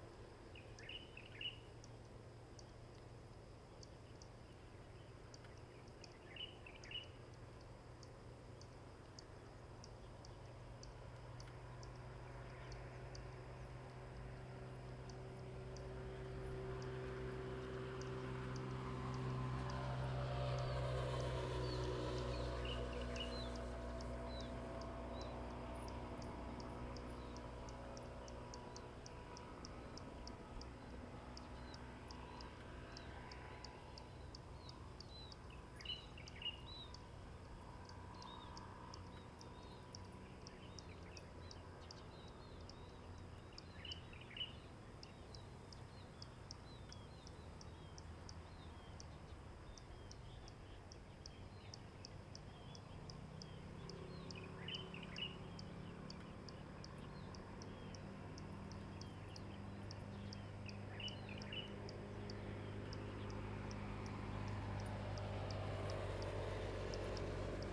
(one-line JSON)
{"title": "南榮里, Taitung City - Birds singing", "date": "2014-09-08 06:53:00", "description": "Birds singing, Traffic Sound\nZoom H2n MS+XY", "latitude": "22.79", "longitude": "121.14", "altitude": "33", "timezone": "Asia/Taipei"}